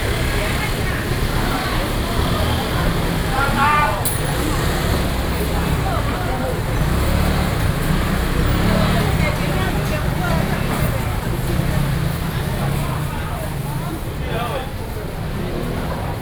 Zhongxing Rd., Banqiao Dist., New Taipei City - Walking in the traditional market
Walking through the traditional market
Sony PCM D50+ Soundman OKM II